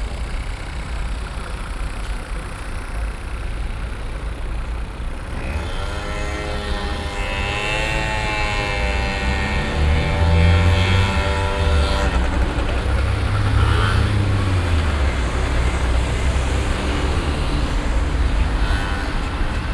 strassen- und bahnverkehr am stärksten befahrenen platz von köln - aufnahme: nachmittags
soundmap nrw: